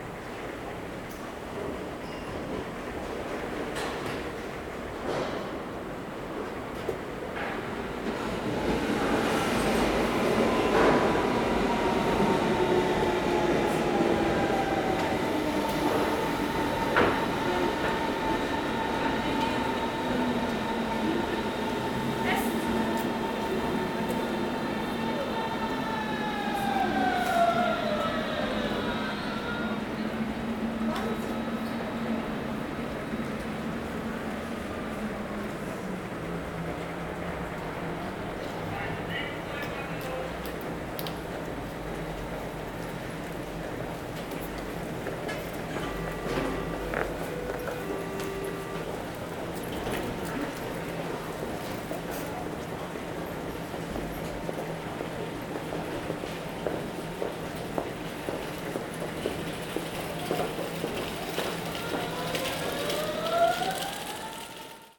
Wittenau, S-Bahn - Aufzug / elevator
18.03.2009 19:20 elevator, train arriving, footsteps
Berlin, Germany, 18 March 2009